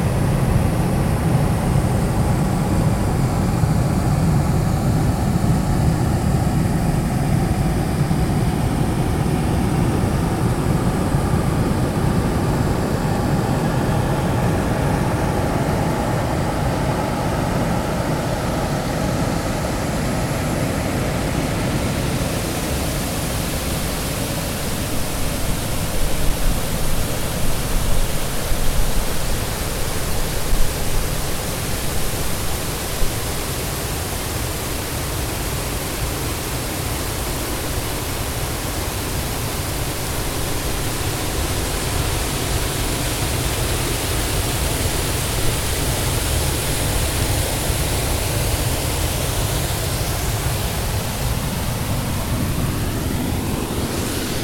Koluszki, Poland - waterfall
Zoom H4n, dam on the river Mroga.
11 July 2012, 21:45